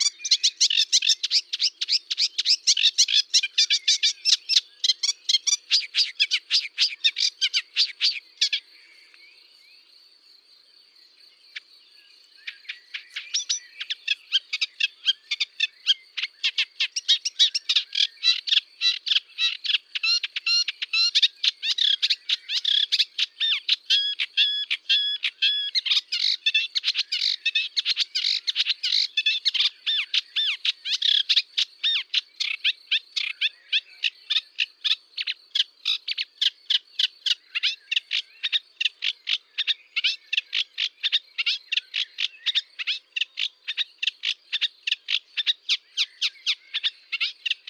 Vers le Lac, Pollieu, France - printemps dans le Bugey
Rouserolle effarvate
Tascam DAP-1 Micro Télingua, Samplitude 5.1